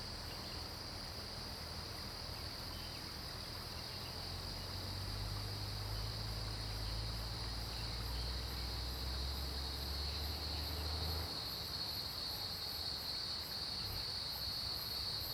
青蛙阿婆的家, 埔里鎮桃米里 - In the woods
Cicada sounds, Insect sounds, Birds singing, Dogs barking
Zoom H2n MS+XY
Puli Township, 桃米巷11-3號